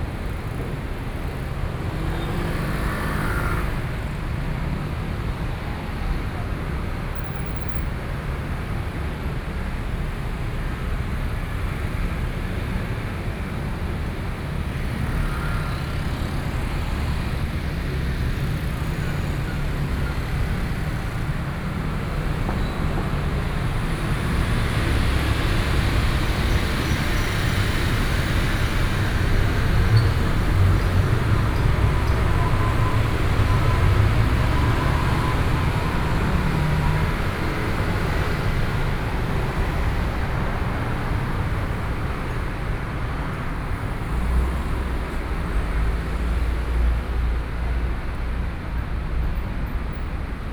Traffic Noise, Underpass, Train traveling through, Sony PCM D50 + Soundman OKM II
Taoyuan County, Taiwan, 11 September 2013